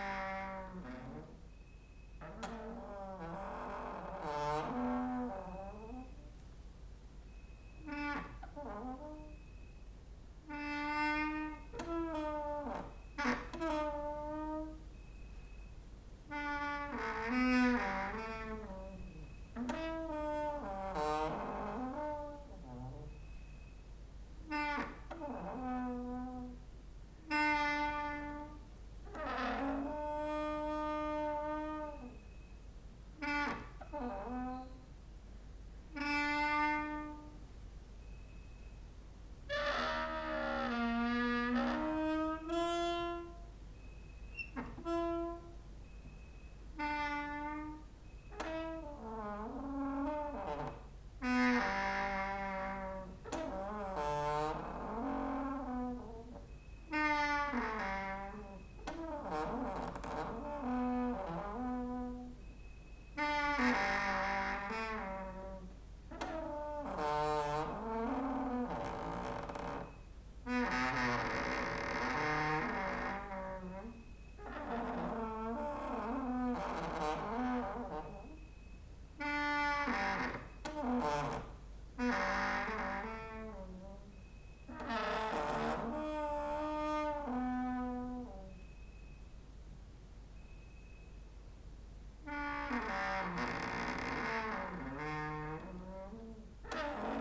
Mladinska, Maribor, Slovenia - late night creaky lullaby for cricket/8
cricket outside, exercising creaking with wooden doors inside